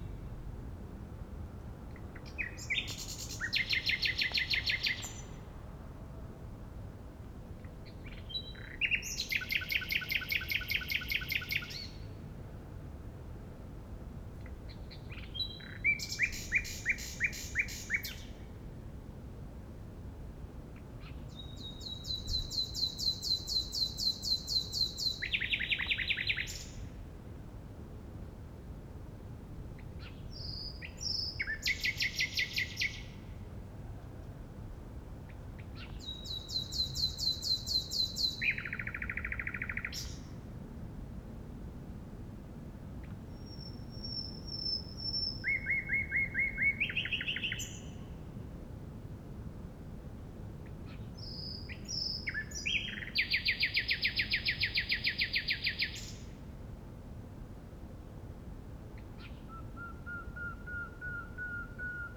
Heidekampweg, Neukölln, Berlin - Nightingale /w reflection
a nighting at Mauerweg, Berlin Neukölln / Treptow, reflectins of his song at the houses opposite
(Sony PCM D50)
Deutschland, 24 May 2020